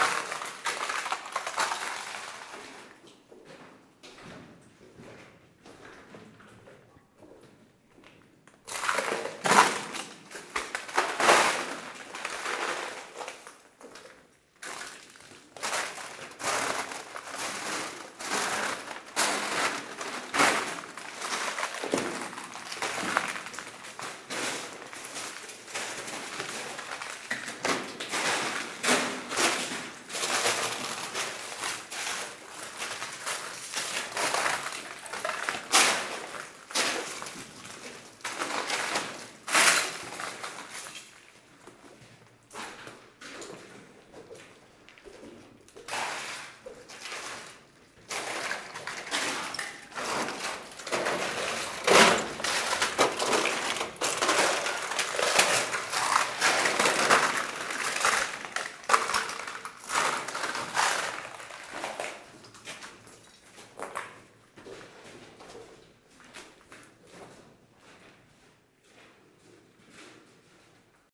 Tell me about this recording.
In a completely forgotten tunnel in an underground mine, walking in a very thick layer of calcite. Walking there is breaking calcite and this makes harsh noises.